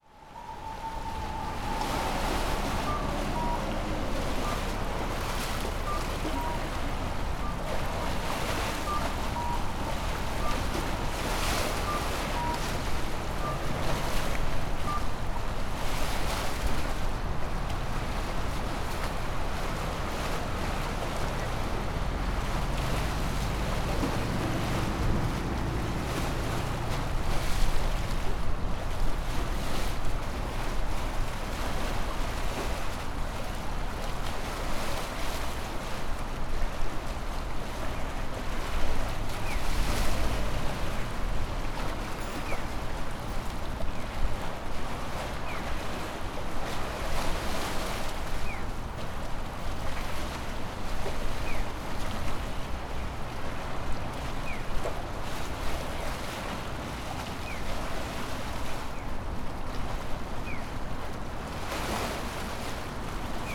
Osaka, Nakanoshima district, one of the northern bridges - water splashes
water splashing on the concrete walls of the canal accompanied by the sounds of pedestrian lights.
近畿 (Kinki Region), 日本 (Japan), 31 March 2013